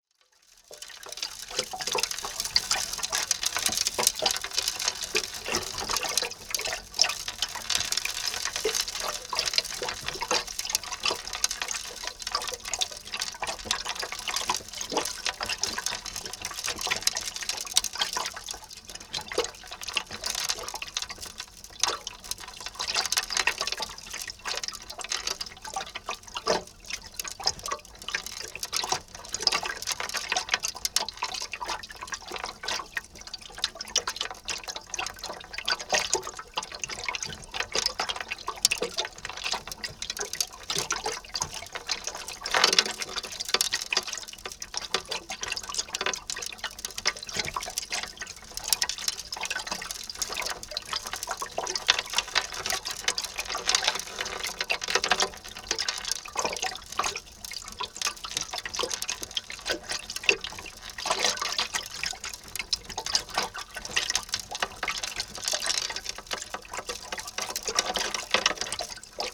metal ring in creek (contact mic)
a metal ring in the creek recorded with a contact mic
AB, Canada